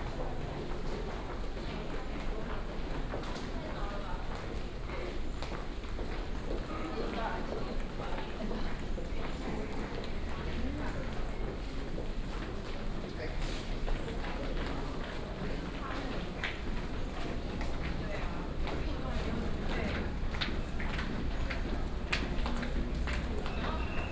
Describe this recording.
From the station platform, Through the flyover, To the station exit, Use vending machines in the lobby